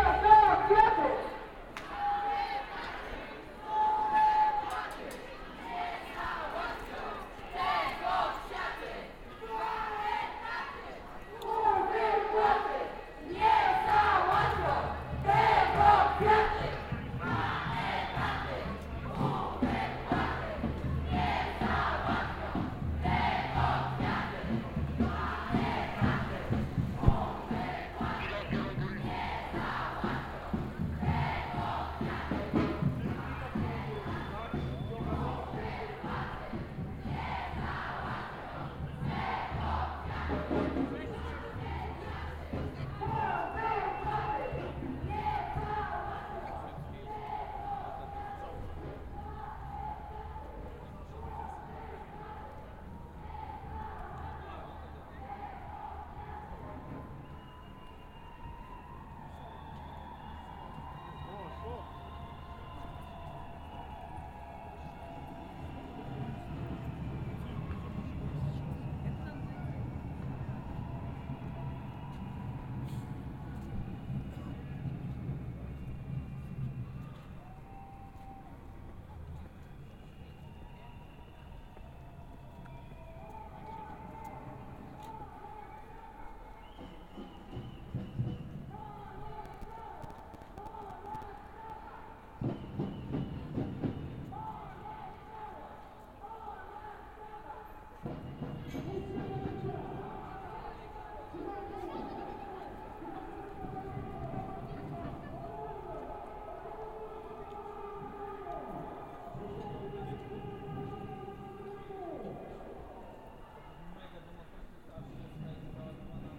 Szewska, Kraków, Poland - (331) Manifa
Recording of passing Manifa on International Women's Day.
Recorded with Soundman OKM on... iPhone (with some zoom adapter I guess...)
województwo małopolskie, Polska, March 2018